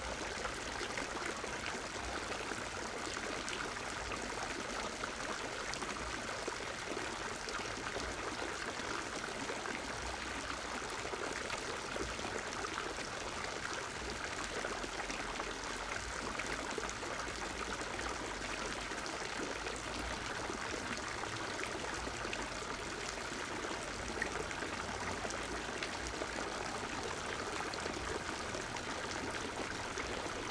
Neptunbrunnen, Hauptpl., Linz, Österreich - fountain
Linz, Hauptplatz, main square, fountain
(Sony PCM D50)
Oberösterreich, Österreich